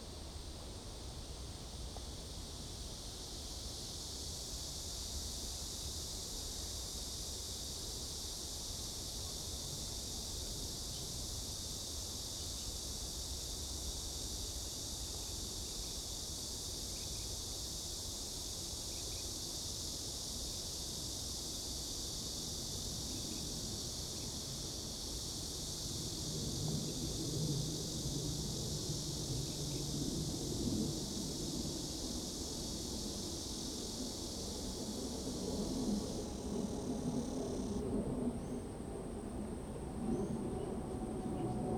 吳厝, Daying Rd., Daxi Dist. - Riverside Park
The plane flew through, wind, bird, Cicada sound, Riverside Park
Zoom H2n MS+XY